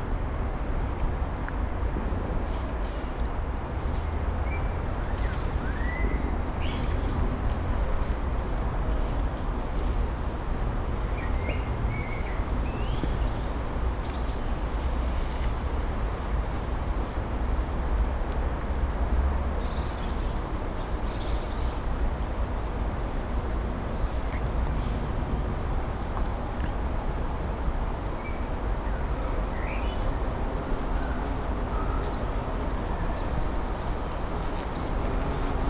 new jewish cemetary
flies in the trees of the cemetary Olšany. Not far from the grave of franz Kafka
8 June 2011, ~12:00